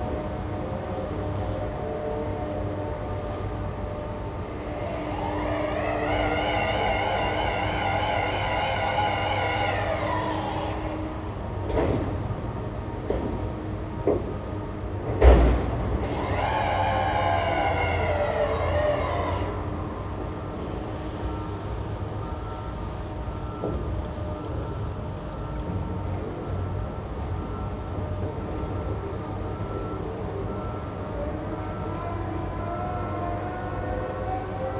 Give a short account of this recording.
Half of Alexanderplatz is currently a building site that effects its soundscape greatly. The sound of drilling, earth moving and other heavy machines is ever present at levels that mask people and generally obscures the sonic atmosphere. It is no longer possible to hear the deep bass from the UBahn underground. Surrounding roads and walkways have been partially blocked and traffic flows re-routed. Yellow trams no longer rumble across the open plaza (a key sound) as the tracks are being replaced. Berlin has constant building work that shifts from location to location. In time this one will be completed, only for the next to start.